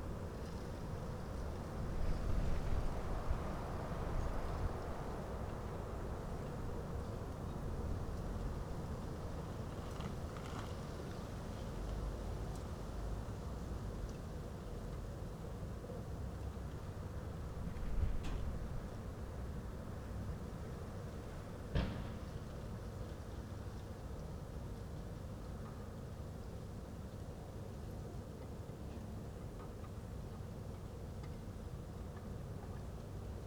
remscheid: johann-sebastian-bach-straße - the city, the country & me: on the rooftop
stormy night, mic on the rooftop
the city, the country & me: march 27, 2014
Remscheid, Germany, 27 March 2014